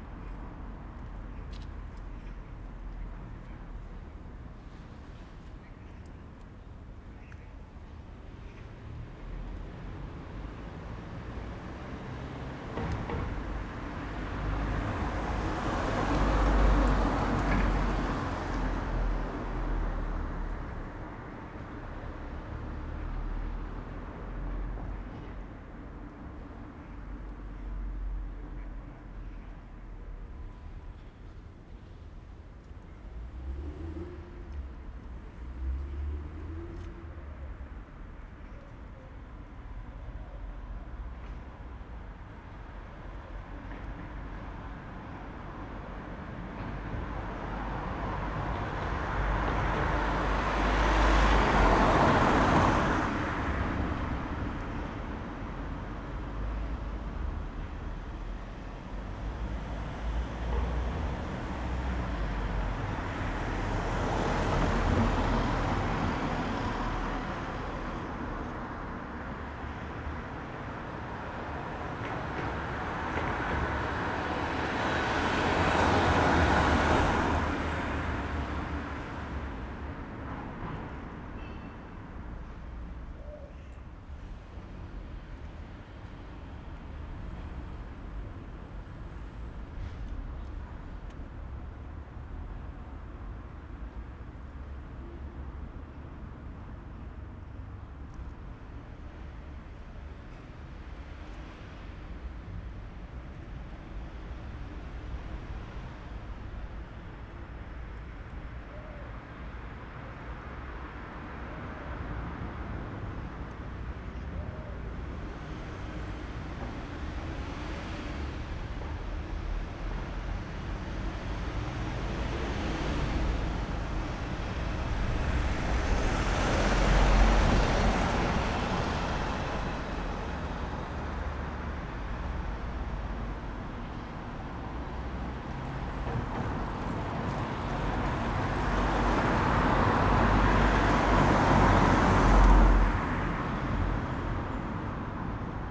{"title": "leipzig lindenau, demmeringstraße ecke raimundstraße", "date": "2011-09-01 09:06:00", "description": "raimundstraße ecke demmeringstraße: passanten, autos, ein baufahrzeug...", "latitude": "51.34", "longitude": "12.33", "altitude": "118", "timezone": "Europe/Berlin"}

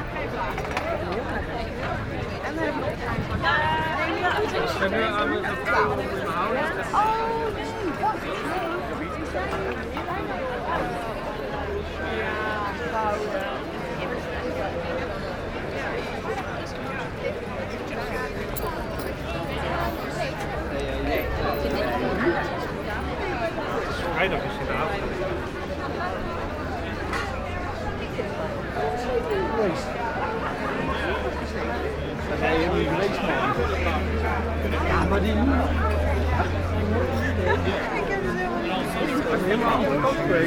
{"title": "Den Haag, Nederlands - Bar terraces", "date": "2019-03-30 13:50:00", "description": "Grote Markt. On a very sunny Saturday afternoon, the bar terraces are absolutely completely busy ! Happy people discussing and drinking.", "latitude": "52.08", "longitude": "4.31", "altitude": "3", "timezone": "Europe/Amsterdam"}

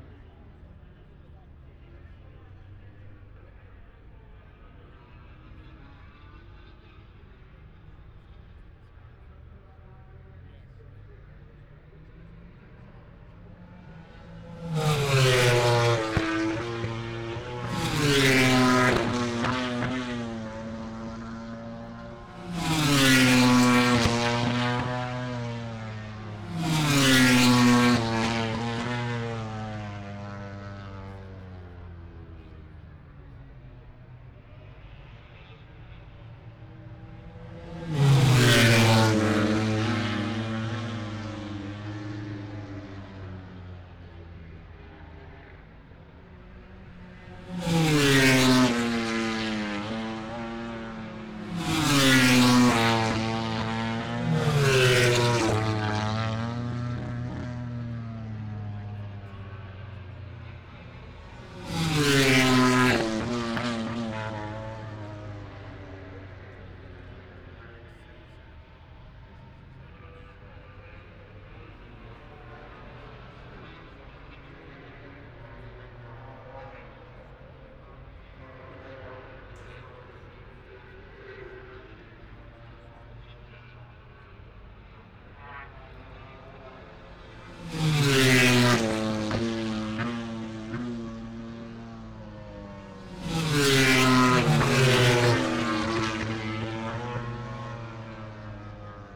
{"title": "Silverstone Circuit, Towcester, UK - british motorcycle grand prix ... 2021", "date": "2021-08-28 13:30:00", "description": "moto grand prix free practice four ... wellington straight ... dpa 4060s to MixPre3 ...", "latitude": "52.08", "longitude": "-1.02", "altitude": "157", "timezone": "Europe/London"}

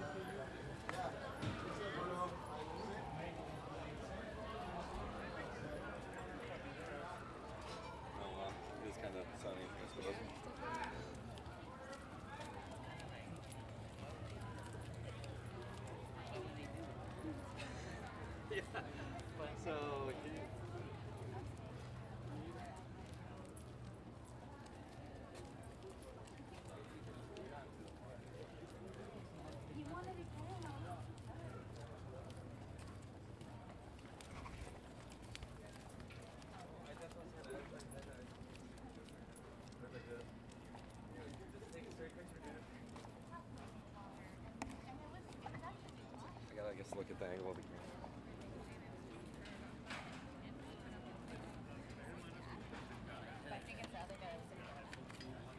{"title": "Harbor Way, Santa Barbara, CA, USA - Sounds of Santa Barbara Harbor", "date": "2019-10-20 15:10:00", "description": "The sound was recorded as I was walking by the restaurants and pedestrian in Santa Barbara Harbor. It includes the sound of fire truck or an ambulance car from far away, clicking sound of utensils in the restaurants, occasional talks from people inside and outside the restaurants in different languages like English, Spanish, Chinese and other. (Boris)", "latitude": "34.40", "longitude": "-119.69", "altitude": "3", "timezone": "America/Los_Angeles"}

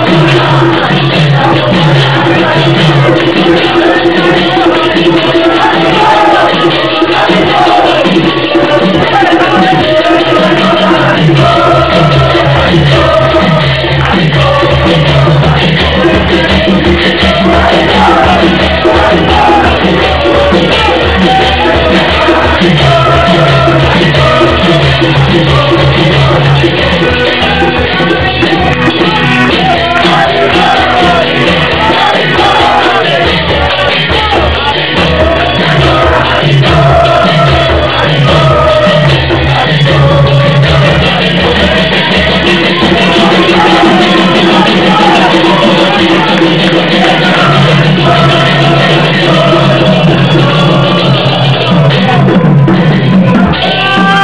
{"title": "Hare Kristna Temple, 1189 Church Street Pretoria, Sunday Night", "date": "2008-11-23 21:26:00", "description": "The high point of the Sunday programme at the Hare Krishna Pretoria temple in South Africa.", "latitude": "-25.74", "longitude": "28.24", "altitude": "1370", "timezone": "Africa/Johannesburg"}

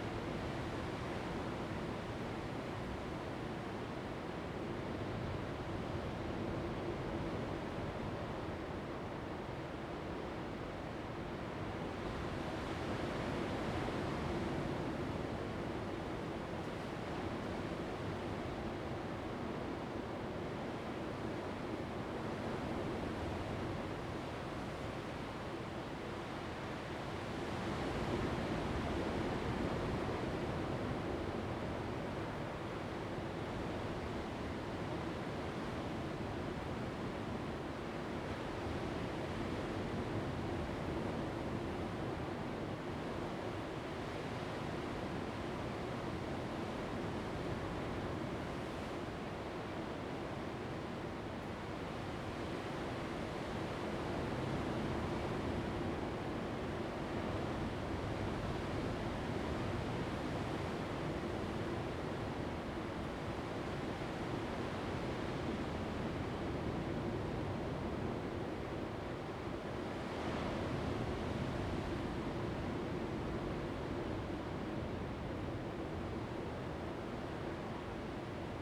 {"title": "Pomelo Lake, Lüdao Township - Inside the cave", "date": "2014-10-30 17:09:00", "description": "Inside the cave, Sound of the waves\nZoom H2n MS +XY", "latitude": "22.67", "longitude": "121.51", "altitude": "12", "timezone": "Asia/Taipei"}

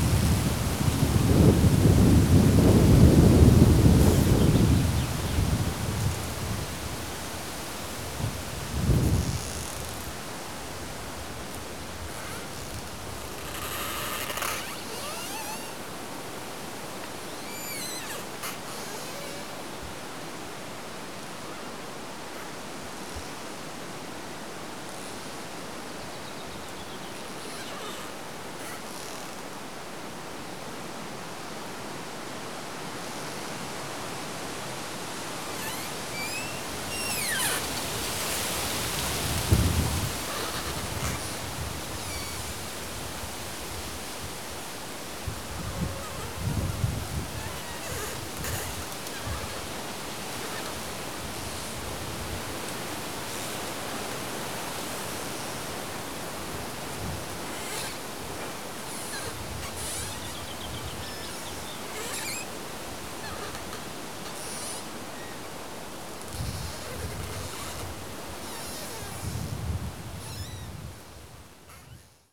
{"title": "Sasino, road towards forest and sand dunes strip - creaking tree trunk", "date": "2013-06-29 11:16:00", "description": "branches of a willow tree rubbing against its trunk.", "latitude": "54.78", "longitude": "17.74", "altitude": "2", "timezone": "Europe/Warsaw"}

